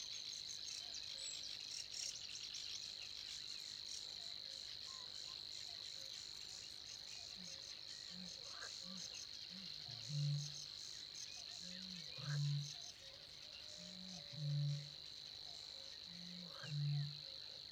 Saint-Omer, France - Étang du Romelaëre - Clairmarais
Étang du Romelaëre - Clairmarais (Pas-de-Calais)
Ambiance matinale
ZOOM H6 + Neumann KM184